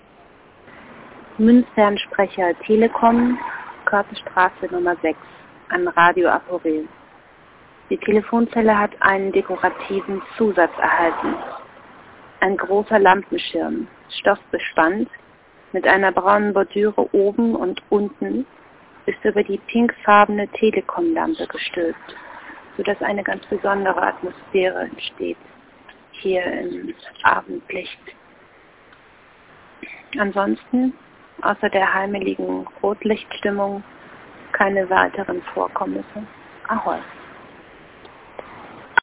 Fernsprecher Körtestraße 6 - Zelle im Rotlicht 26.08.2007 20:15:54